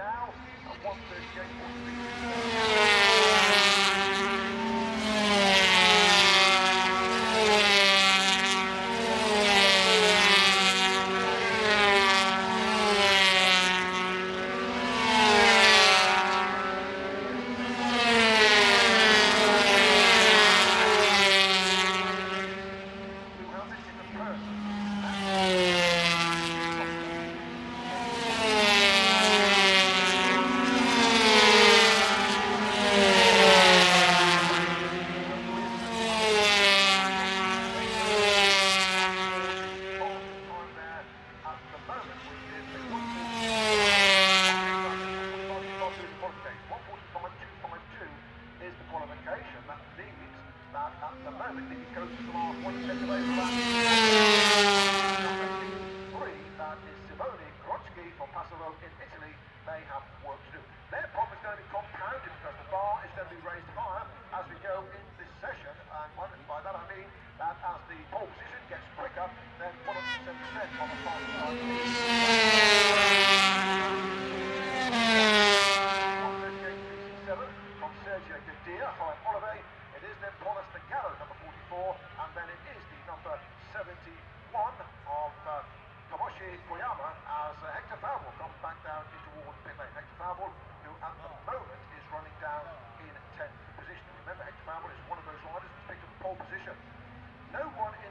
Unnamed Road, Derby, UK - british motorcycle grand prix 2007 ... 125 qualifying 2 ...
british motorcycle grand prix 2007 ... 125 qualifying 2 ... one point stereo mic to minidisk ...
England, United Kingdom, 23 June 2007